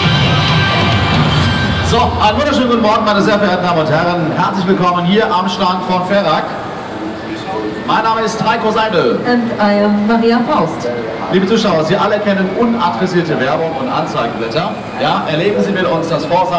Stockum, Düsseldorf, Deutschland - düsseldorf, trade fair, hall 15
Inside hall 15 of the Düsseldorf trade fair during the DRUPA. The sound of moderated product presentations within the overall sound of different kind of machines in the wide hall ambience.
soundmap nrw - social ambiences and topographic field recordings